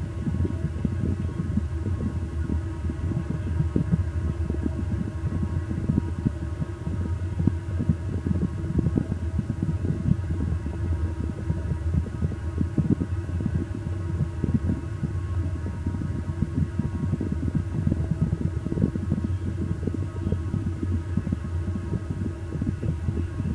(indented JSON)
{
  "title": "Manhole, Houston, Texas, USA - Manhole",
  "date": "2012-11-20 01:45:00",
  "description": "I really don't know for sure what was going on down in this manhole shaft while I was recording, but I like it. Did I lower the mics into a web of bubbles? A cluster of alien egg-sacks? Roaches? Distant voices, music, etc.\nCA14 cardioid pair > DR100 MK2",
  "latitude": "29.73",
  "longitude": "-95.39",
  "altitude": "21",
  "timezone": "America/Chicago"
}